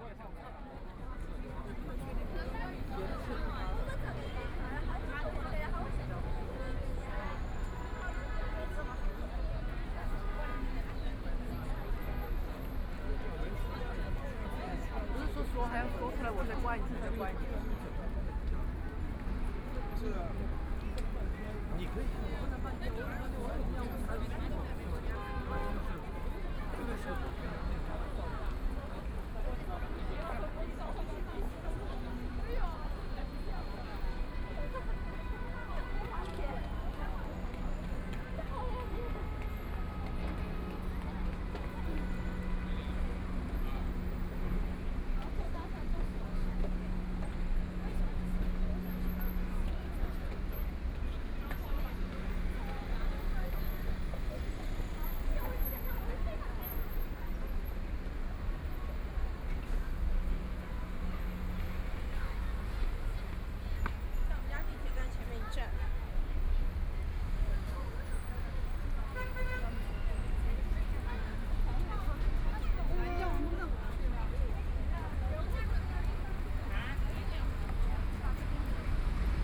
Xujiahui, Shanghai - walk into the Mall
From the street to go into the shopping center, Traffic Sound, Crowd, Binaural recording, Zoom H6+ Soundman OKM II